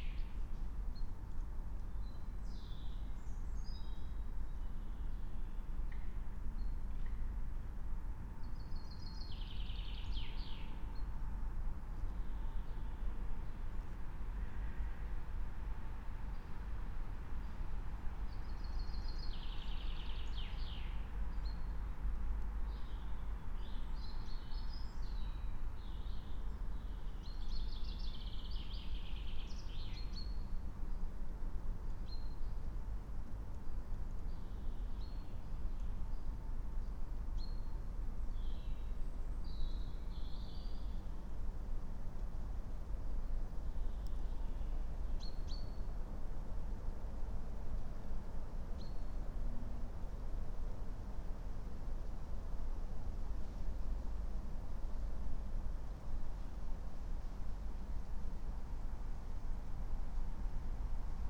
19:03 Lingen, Emsland - forest ambience near nuclear facilities